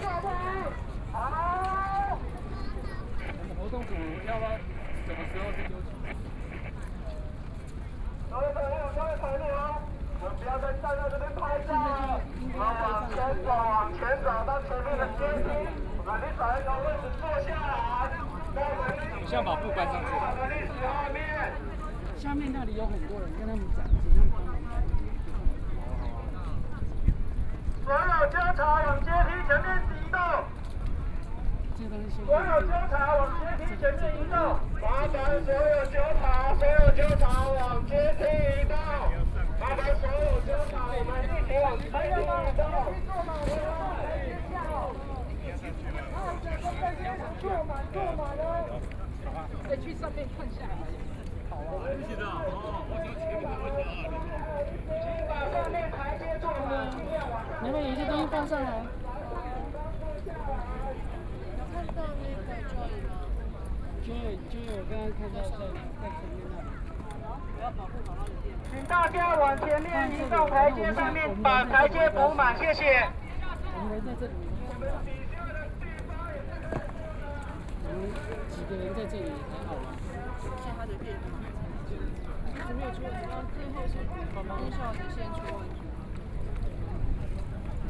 A group of young people through a network awareness, Nearly six million people participated in the call for protest march, Taiwan's well-known writers and directors involved in protests and speeches, Binaural recordings, Sony PCM D50 + Soundman OKM II